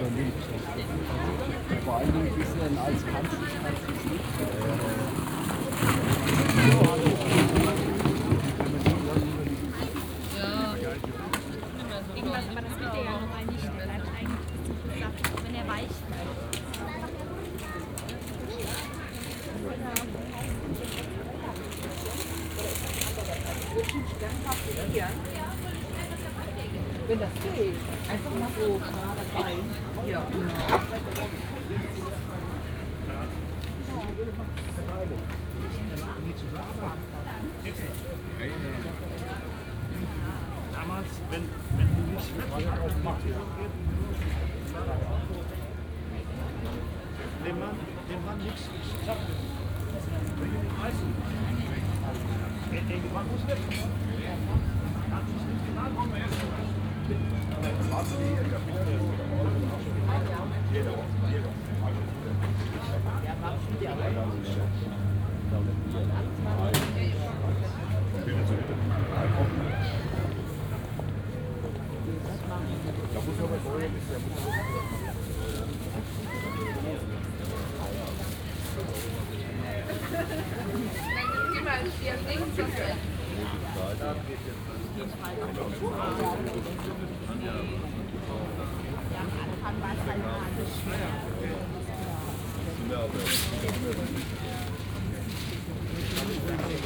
{
  "title": "Limburg an der Lahn, Neumarkt - weekend market",
  "date": "2012-03-10 10:25:00",
  "description": "nice small market in the center of Limburg, walk, binaural\n(tech note: sony pcm d50, okm2)",
  "latitude": "50.39",
  "longitude": "8.06",
  "altitude": "121",
  "timezone": "Europe/Berlin"
}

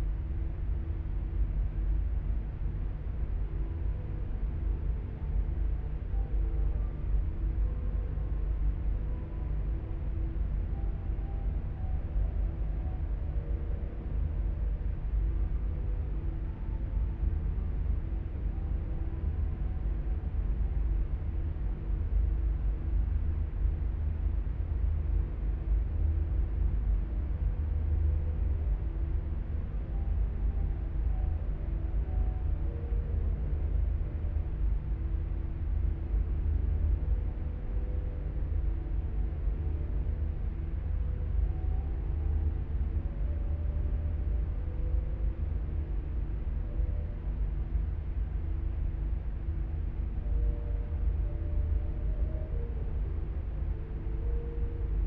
{
  "title": "Golzheim, Düsseldorf, Deutschland - Düsseldorf. Robert Schumann Hochschule, Krypta",
  "date": "2012-12-04 14:35:00",
  "description": "Inside a basement chamber of the music school building which has been turned into a Krypta by the artist work of Emil Schult in five years work from 1995 to 2000.\nThe sounds of the room heating and ventilation and music coming from the rehearsal chambers of the floor above.\nThis recording is part of the exhibition project - sonic states\nsoundmap nrw - sonic states, topographic field recordings and art places",
  "latitude": "51.24",
  "longitude": "6.77",
  "altitude": "43",
  "timezone": "Europe/Berlin"
}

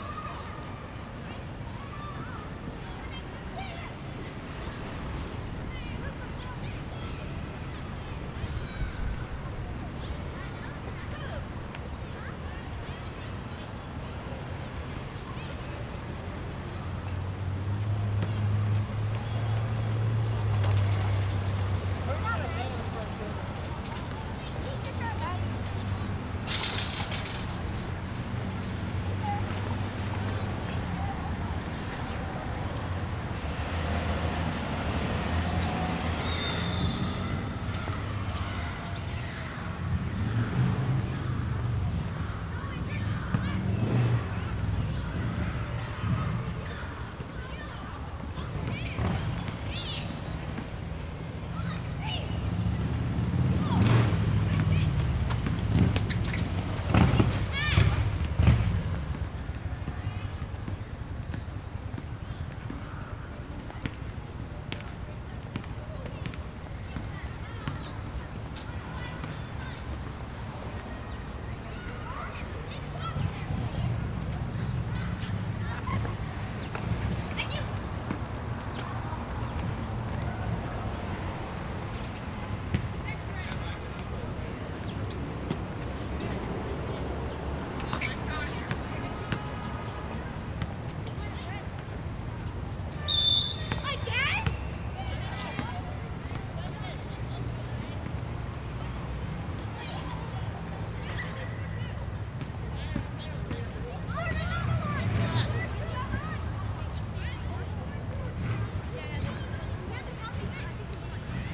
{
  "title": "E 21st St, New York, NY, USA - School Playground, Manhattan",
  "date": "2022-02-17 10:10:00",
  "description": "School playground, sounds of children playing basketball.\nA student fakes an injury to avoid playing soccer with her classmates.",
  "latitude": "40.74",
  "longitude": "-73.98",
  "altitude": "21",
  "timezone": "America/New_York"
}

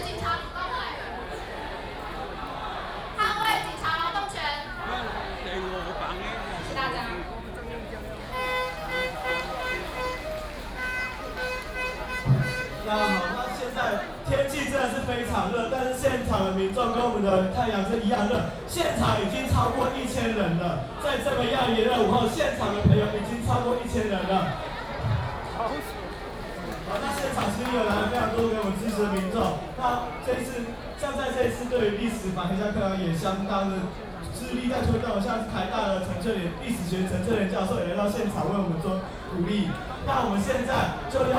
{
  "title": "Xuzhou Rd., Zhongzheng Dist. - High school student protests",
  "date": "2015-07-05 15:55:00",
  "description": "Protest, High school student protests",
  "latitude": "25.04",
  "longitude": "121.52",
  "altitude": "10",
  "timezone": "Asia/Taipei"
}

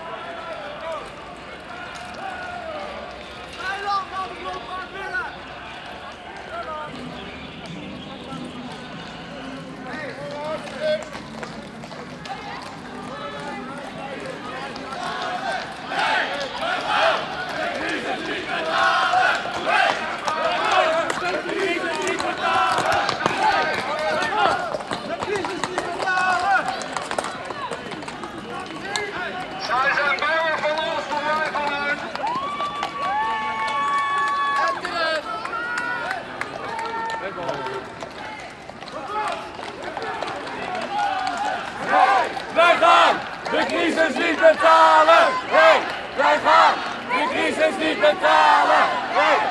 {
  "title": "15O - Occupy Den Haag, police block",
  "date": "2011-10-15 13:15:00",
  "latitude": "52.08",
  "longitude": "4.32",
  "altitude": "2",
  "timezone": "Europe/Amsterdam"
}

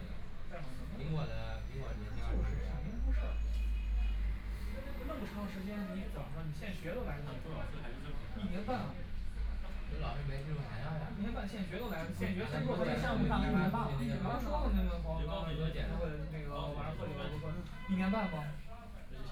{
  "title": "上海浦東新區 - In the ramen shop",
  "date": "2013-11-21 11:56:00",
  "description": "In the ramen shop, Binaural recording, Zoom H6+ Soundman OKM II",
  "latitude": "31.23",
  "longitude": "121.52",
  "altitude": "12",
  "timezone": "Asia/Shanghai"
}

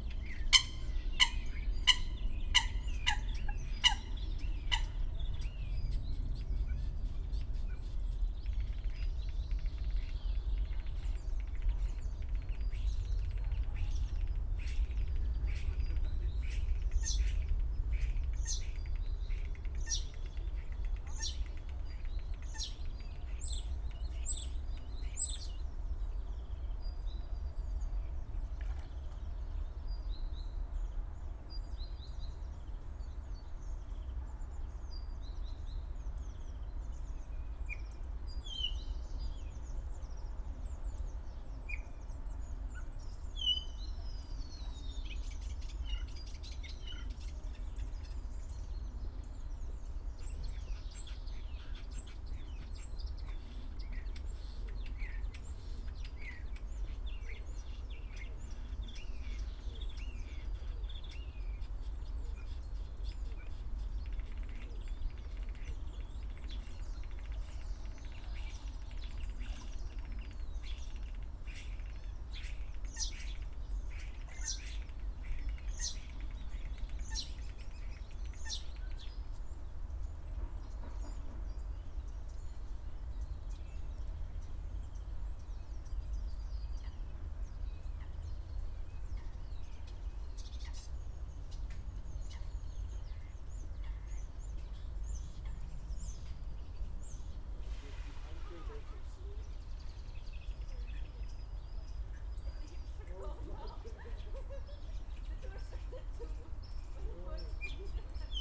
{
  "title": "Plötzensee, Wedding, Berlin - early spring ambience",
  "date": "2017-03-11 13:10:00",
  "description": "walking around Plötzensee, a small Berlin inner-city lake, early spring ambience\n(SD702, DPA4060)",
  "latitude": "52.55",
  "longitude": "13.33",
  "altitude": "36",
  "timezone": "Europe/Berlin"
}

